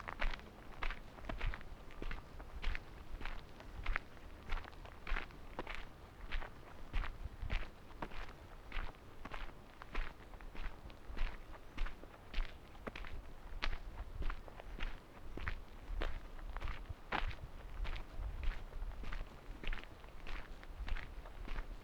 Drammen, Norway, a walk on a mountain
with unfiltered winds:)
13 August 2013